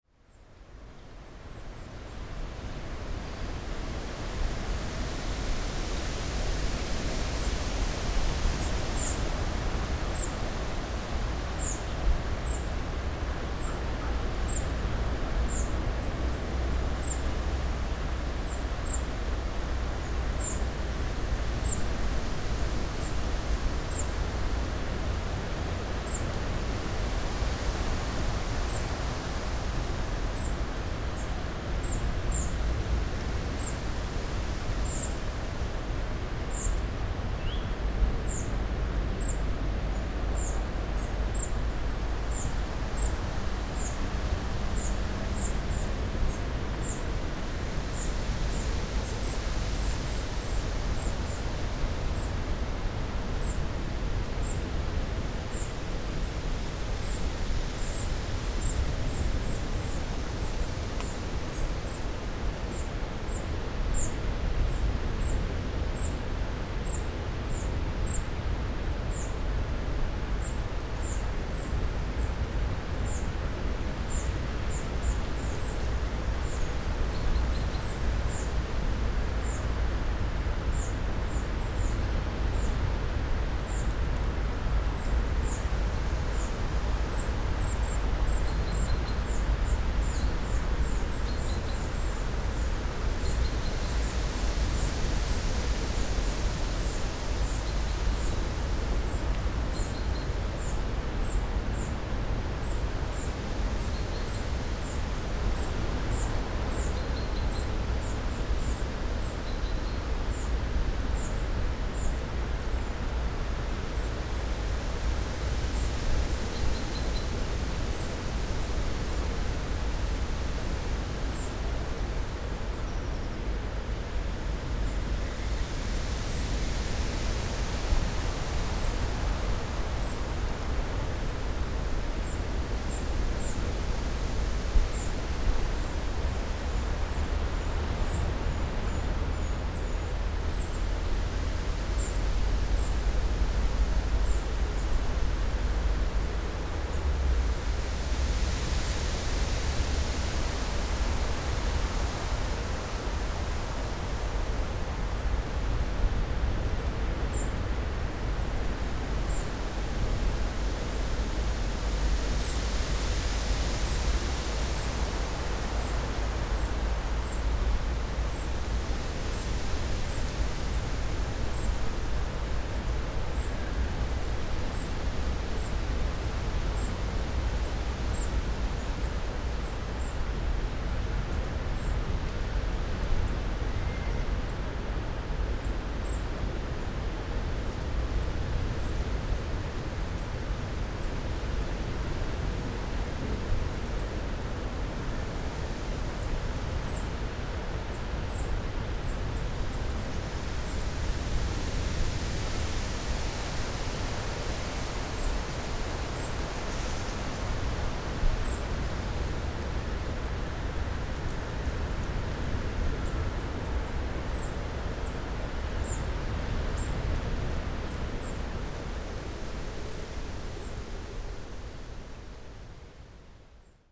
pine forest in Hel. roaring sea and some birds
Wladyslawowo, Poland, 14 August 2014, 19:20